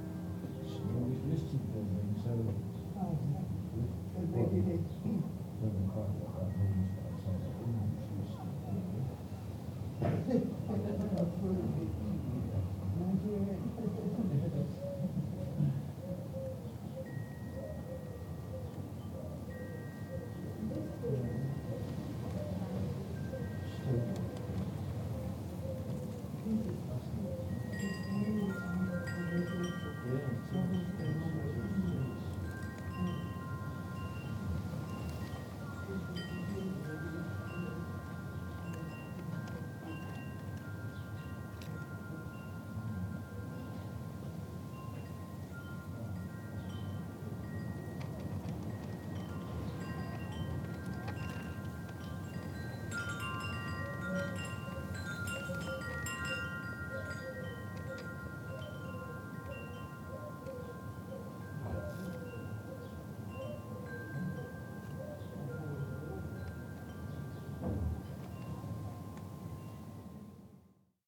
Lion Street, Rye, East Sussex, UK - Windchimes outside the tiny book store
I noticed a bell tower at the top of the street and wanted to hear the clock strike the hour. We wandered up there and noticed the sound of some wind chimes hanging outside a shop marked "tiny book store". It was a very windy day but I managed to get into a corner against the thick church walls to shield my recorder from the worst of the blasts. This gives the recording a bit of a claustrophobic feeling I think, as you can hear sounds reflecting back from a thick stone wall in a confined area. Alas with EDIROL R-09 and its little fluffy wind cover there is not much more to be done other than trying to find a non-windy spot! A pigeon started cooing, some people passed by, chatting, and our paper bag from Simon's pie shop rustled in the wind. The clock struck the hour about three minutes past the hour.